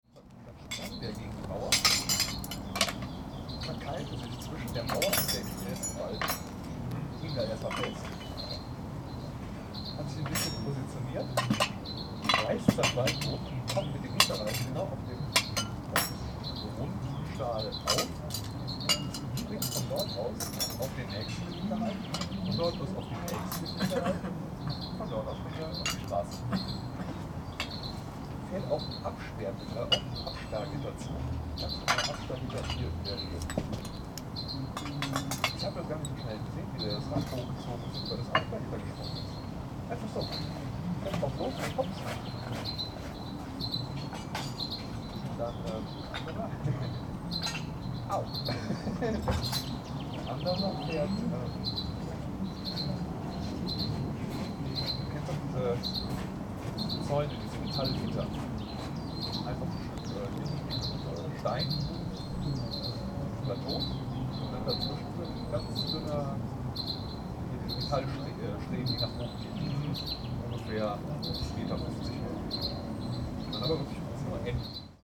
07.05.2009 das Restaurant auf dem Petersberg schliesst, letzte Gäste. Im Hintergrund ist das Rauschen der 200m unterhalb gelegenen Stadt Königswinter zu hören.
Große Bedeutung für die deutsche Nachkriegsgeschichte erlangte der Berg als Sitz der Alliierten Hohen Kommission, die sich aus den höchsten Vertretern der Siegermächte in Deutschland nach dem Zweiten Weltkrieg zusammensetzte und von 1949 bis 1955 bestand.
Restaurant Petersberg, closing time, last guests talking, background noise of city Königswinter 200m below. After World War II the Hotel Petersberg became the seat of the Allied High Commission for Germany. The Occupation Statute was issued here on September 21, 1949. Several weeks later, November 22, 1949, the Petersberg Agreement was signed between Chancellor Adenauer and the Western Allies. The Allied High Commission resided on the Petersberg until 1955, when West Germany gained its sovereignty.
Königswinter, Germany